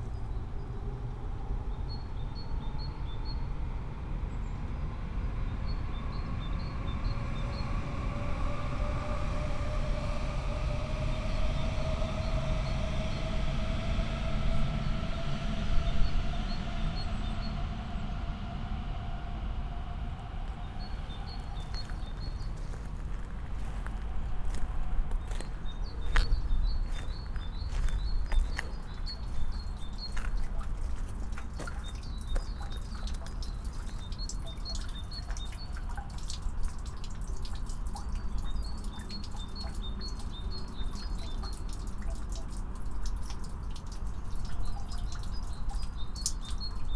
abandoned container terminal, Berlin - short walk, ambience
short walk on a former container termina area. the place seems abandoned, rotten buildings, lots of debris and waste, somes traces of past usage. but it's weekend, so things may be different on a workday.
(SD702, DPA4060)